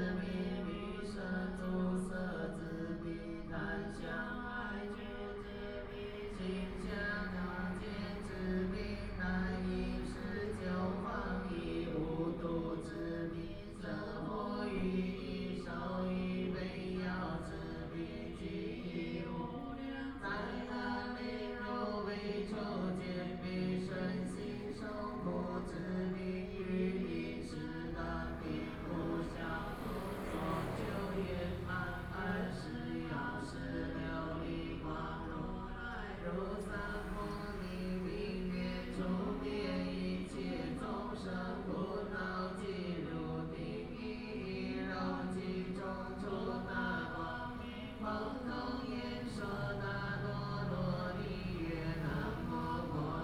Sanmin St., Hualien City - Funeral
Funeral, Chanting voices, Traffic Sound
Binaural recordings
Zoom H4n+ Soundman OKM II
Hualien County, Taiwan, 24 February